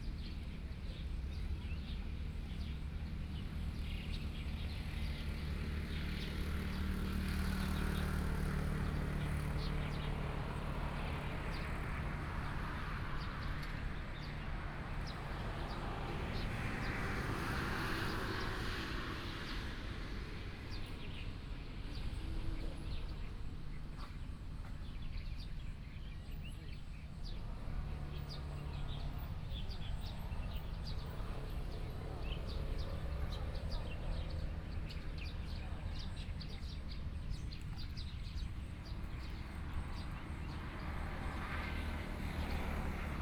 宜蘭運動公園, Yilan City - in the Park
in the Park, Traffic Sound, Birds
Sony PCM D50+ Soundman OKM II